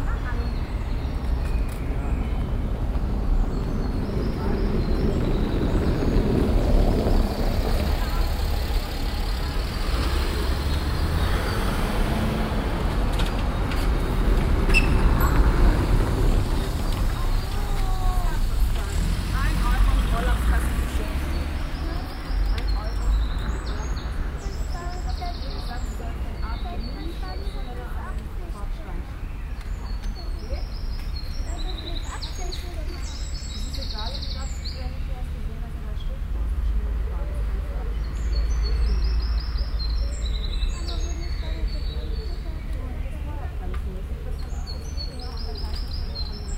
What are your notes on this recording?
soundmap: cologne/ nrw, strassenecke morgens, fahrzeuge, kinderwagen, fahrräder, kinder und mütter, project: social ambiences/ listen to the people - in & outdoor nearfield recordings